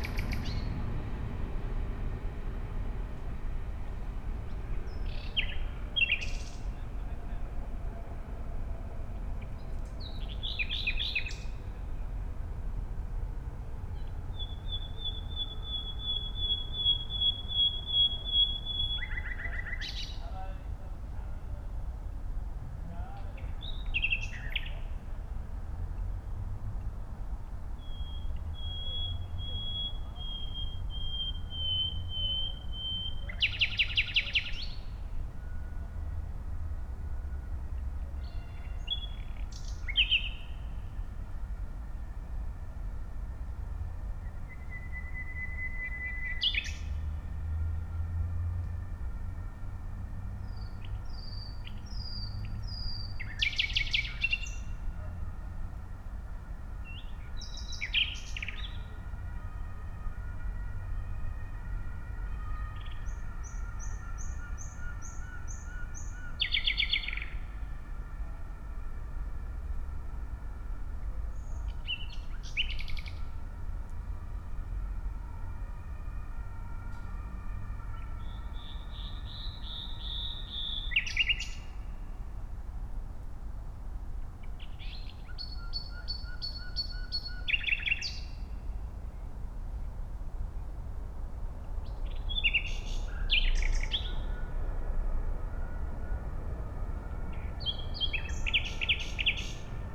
Gleisdreieck park, Kreuzberg, Berlin - midnight, nightingale and trains
Berlin, Park am Gleisdreieck, a nightingale surrounded by trains, midnight ambience
(Sony PCM D50, Primo EM172 AB)